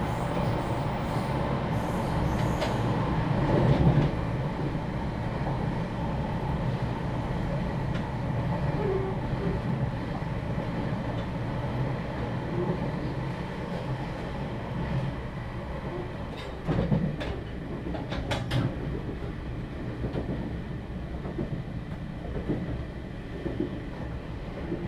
新北市汐止區, Taiwan - Inside the train compartment
Inside the train compartment, Train compartment connecting channel, Zoom H2n MS+XY